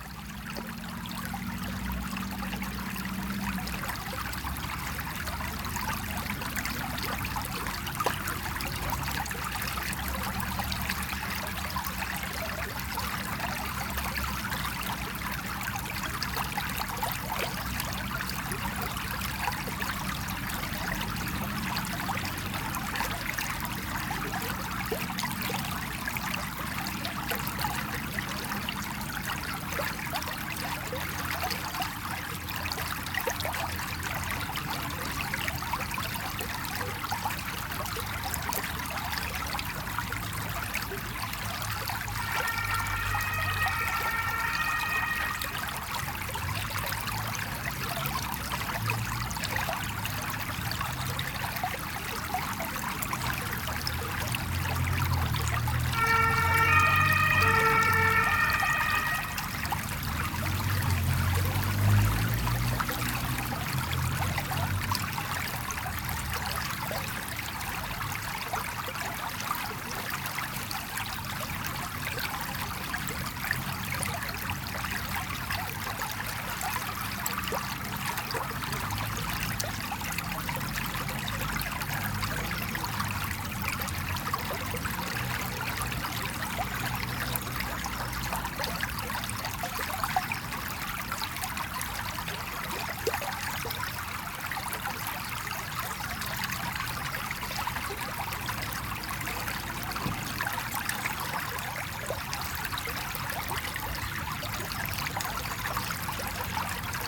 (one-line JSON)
{"title": "Rue du Moulin, Linkebeek, Belgique - Small river - ruisseau", "date": "2022-03-26 10:16:00", "description": "Tech Note : Ambeo Smart Headset AB position.", "latitude": "50.78", "longitude": "4.33", "altitude": "54", "timezone": "Europe/Brussels"}